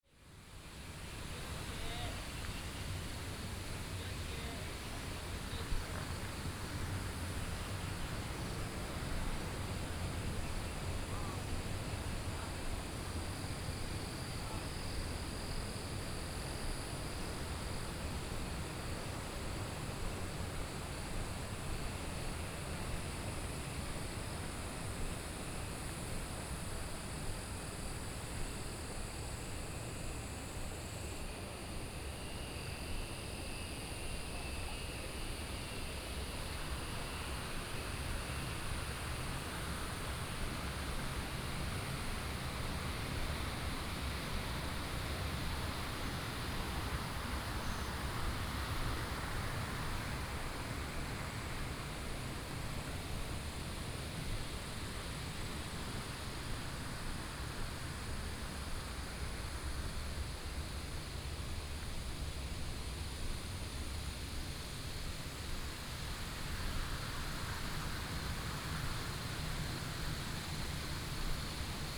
桃米巷, 埔里鎮桃米里, Nantou County - Walking in a small way
Walking in a small way, Frog chirping, Insect sounds, Stream